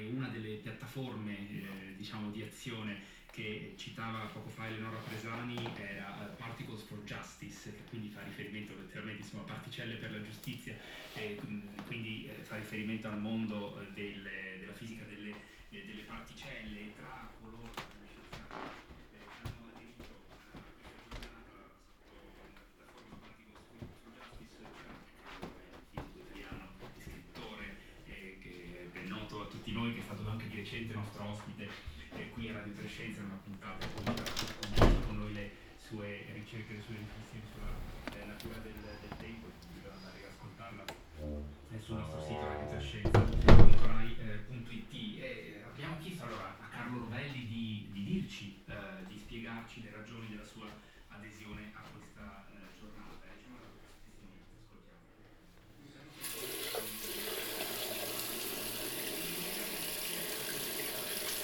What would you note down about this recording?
“Outdoor market on Thursday in the square at the time of covid19” Soundwalk, Chapter CIV of Ascolto il tuo cuore, città. I listen to your heart, city. Thursday, June 11th 2020. Walking in the outdoor market at Piazza Madama Cristina, district of San Salvario, Turin ninety-thre days after (but day thirty-nine of Phase II and day twenty-six of Phase IIB and day twaenty of Phase IIC) of emergency disposition due to the epidemic of COVID19. Start at 11:24 a.m., end at h. 11:52 a.m. duration of recording 18’25”, full duration 28’15” *, As binaural recording is suggested headphones listening. The entire path is associated with a synchronized GPS track recorded in the (kml, gpx, kmz) files downloadable here: This soundwalk follows in similar steps to similar walk, on Thursday too, April 23rd Chapter LIV of this series of recordings. I did the same route with a de-synchronization between the published audio and the time of the geotrack because: